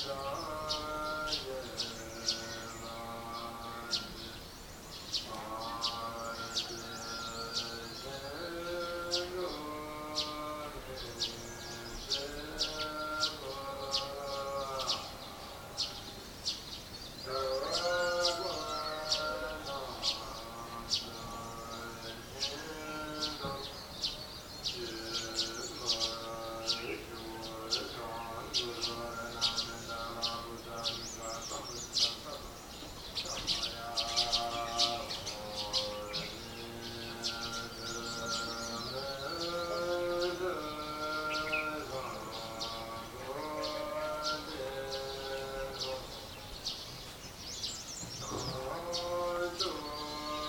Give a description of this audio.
I have to guess at the time, but I know it was surprisingly early that we woke up to the sound of Buddhist chants being broadcast via loudspeaker over the village of Yuksom from the local temple. These chants went on for the whole day, and if my memory is correct also for the day after. The chants are punctuated by percussion/horn crescendos, and interspersed with plenty of birdsong from outside the hostel window, and the occasional cockerel crow, engine or voice from the street. Recorded on an OLYMPUS VN8600.